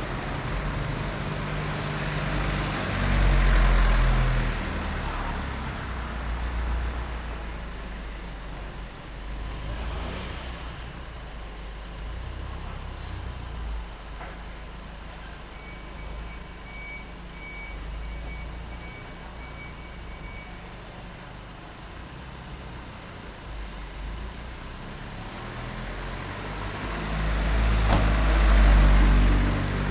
Taishan District, New Taipei City - Environmental sounds on the street
Environmental sounds on the street, Traffic Sound, Zoom H6